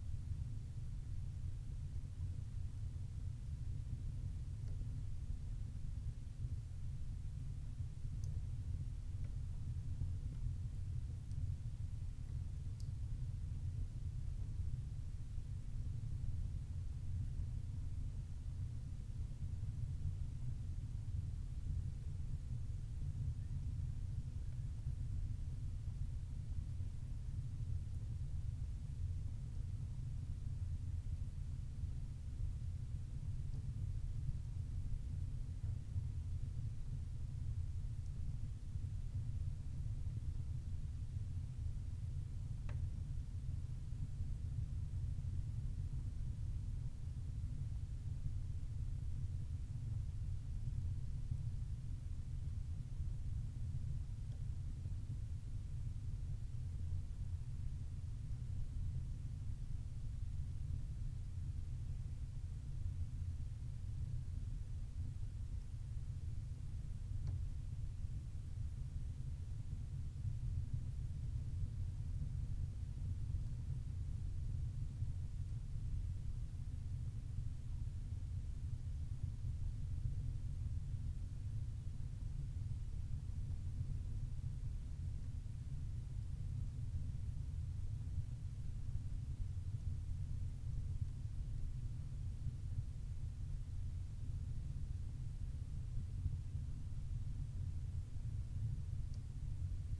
{"title": "Nesbister böd, Whiteness, Shetland, UK - The low drone of the stove inside the böd", "date": "2013-08-05 21:24:00", "description": "The böd at Nesbister is in a truly beautiful situation, a fifteen minute walk from where you can dump a car, perched at the edge of the water, at the end of a small, rocky peninsula. There is a chemical toilet and a cold tap there, and it's an old fishing hut. No electricity. People who have stayed there in the past have adorned the ledge of the small window with great beach finds; bones, shells, pretty stones, pieces of glass worn smooth by the sea, and driftwood. There is a small stove which you can burn peat in, and I set the fire up in this before heading down the bay to collect more driftwood kindling for the next person to stay after me. I set up EDIROL R-09 to document the wonderful low drone of a small peat-burning stove in an off-grid cottage with thick stone walls, thinking that this kind of domestic soundscape would have been the background for many nights of knitting in Shetland in the past.", "latitude": "60.19", "longitude": "-1.29", "altitude": "76", "timezone": "Europe/London"}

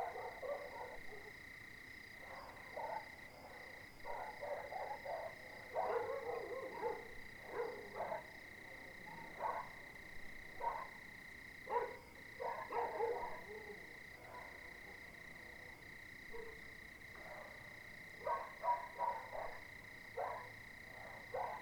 {"title": "CILAOS quartier des Étangs - 20190120 02h59 chiens pour la nuit", "date": "2019-01-20 02:59:00", "description": "J'ai profité pour cette prise de son, que le chien le plus proche se taise, laissant entendre les grillons et ceux qui sont plus loin\nLe quartier est envahi de chiens, il est très rare d'avoir la paix la nuit. je ne dors plus la fenêtre ouverte: pour ne pas être réveillé être tout fermé ne suffit pas, si le chien le plus proche aboie, c'est 110dB qui tapent au mur de la maison et le béton n'isole pas, même avec doublage intérieur et double fenêtre il en reste assez pour réveiller: il faut être fermé, et en plus avec de la mousse dans les oreilles: avec la chaleur c'est étouffant. Pour cet enregistrement, les chiens proches ont jappé une demi heure avant, et calmés, laissent entendre ceux de la cité, qui eux ont commencé à midi samedi, jusqu'à la fin de nuit de dimanche.", "latitude": "-21.14", "longitude": "55.47", "altitude": "1184", "timezone": "Indian/Reunion"}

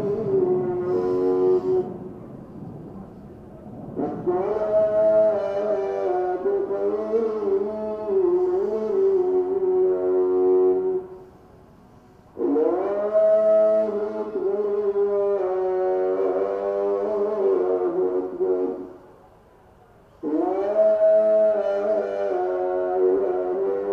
{"title": "Kabul, Nähe Darulaman Road, Ruf des Muezzin/Düsenjet/Atem", "latitude": "34.48", "longitude": "69.13", "altitude": "1814", "timezone": "GMT+1"}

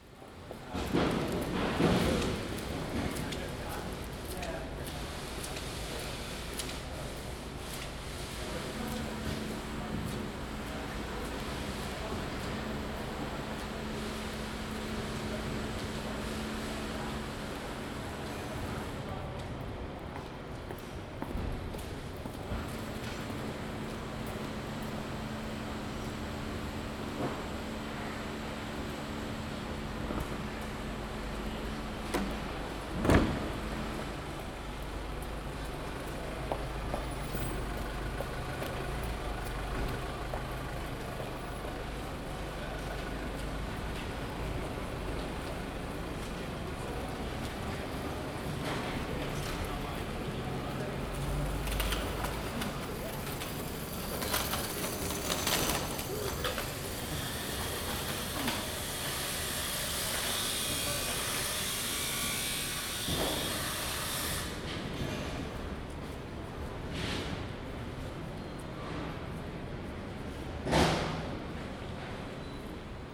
{"title": "Queen Anne's Gate and Dartmouth Street, London. - Queen Anne's Gate and Dartmouth Street Building Work", "date": "2017-06-27 12:40:00", "description": "Building work on the corner of Queen Anne's Gate and Dartmouth Street, London. Sounds from the building site with passing pedestrians. Zoom H2n", "latitude": "51.50", "longitude": "-0.13", "altitude": "15", "timezone": "Europe/London"}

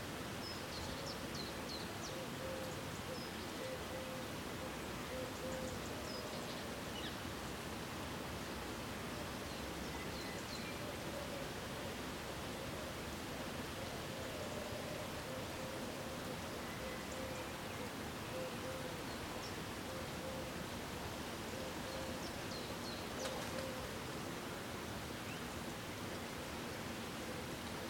{"title": "Rue Keyenbempt, Uccle, Belgique - finally peace 1", "date": "2020-03-22 08:00:00", "latitude": "50.79", "longitude": "4.32", "altitude": "29", "timezone": "Europe/Brussels"}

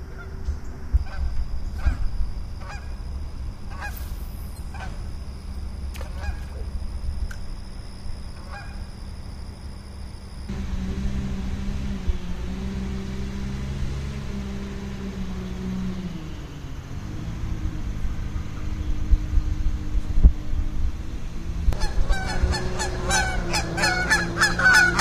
{"title": "Blackwell Forest Preserve", "date": "2010-09-22 10:00:00", "description": "Geese, Fishing, Birds, Nature preserve.", "latitude": "41.83", "longitude": "-88.18", "altitude": "215", "timezone": "America/Chicago"}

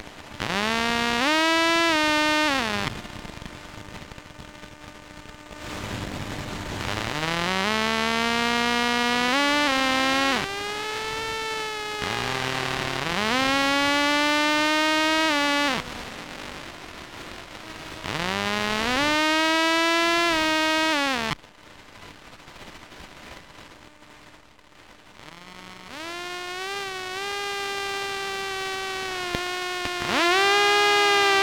{"title": "Vilnius, Lithuania, electromagnetic listenings: trolleys", "date": "2020-12-03 15:45:00", "description": "standing at the street with Soma Ether electromagnetic listening device. Trolleys passing by...", "latitude": "54.67", "longitude": "25.28", "altitude": "140", "timezone": "Europe/Vilnius"}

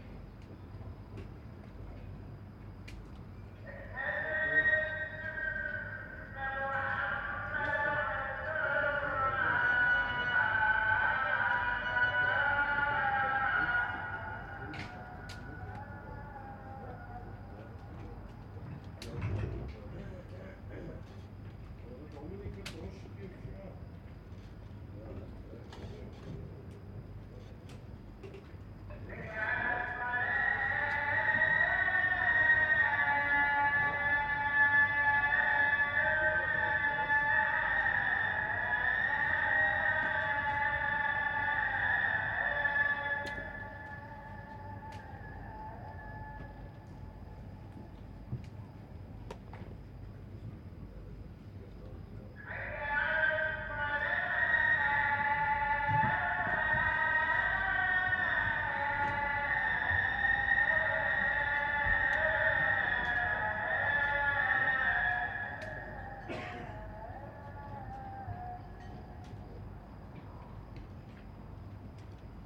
{"title": "Marina Göcek, Turkey - 918c Muezzin call to prayer (early morning)", "date": "2022-09-23 05:55:00", "description": "Recording of an early morning call to prayer.\nAB stereo recording (17cm) made with Sennheiser MKH 8020 on Sound Devices MixPre-6 II.", "latitude": "36.75", "longitude": "28.94", "timezone": "Europe/Istanbul"}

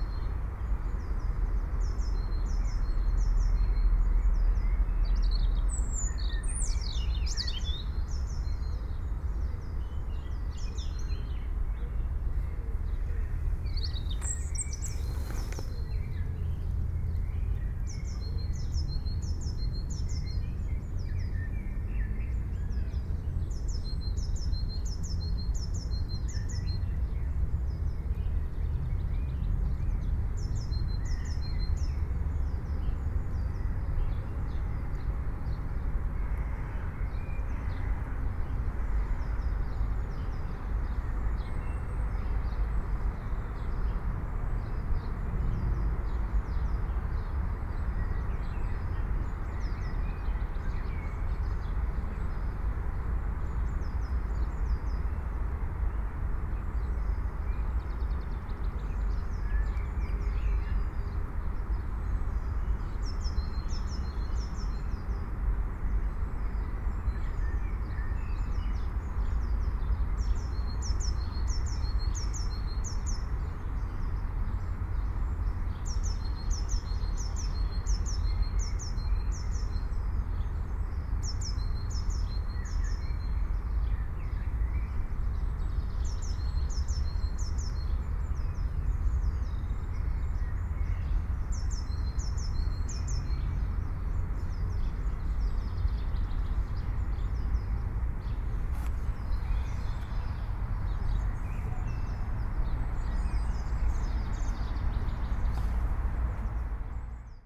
{"title": "Большой Тиргартен, Берлин, Германия - birds in Tiergarten", "date": "2012-04-01", "description": "Bierds are singing in Tiergarden. Sometimes cars are passing far away", "latitude": "52.51", "longitude": "13.36", "altitude": "36", "timezone": "Europe/Berlin"}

